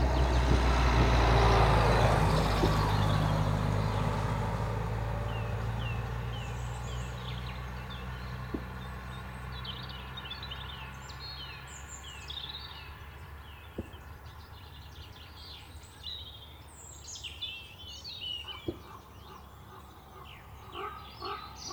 The Purbeck peninsula in Dorset has a lot of Military firing ranges and army practice areas. I visit regularly and always find it disturbing and very incongruous when the roads are closed and live round firing is juxtaposed with the beauty and peace of one of the most beautiful parts of England.
Mix Pre 6 Mk11, Sennheiser 416 and homemade cardioid pair.
England, United Kingdom